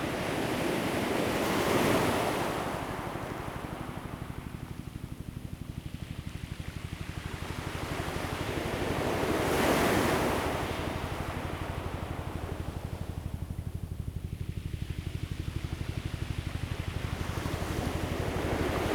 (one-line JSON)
{"title": "三仙里, Chenggong Township - sound of the waves", "date": "2014-09-08 15:24:00", "description": "Sound of the waves, Helicopter\nZoom H2n MS+XY", "latitude": "23.13", "longitude": "121.40", "altitude": "1", "timezone": "Asia/Taipei"}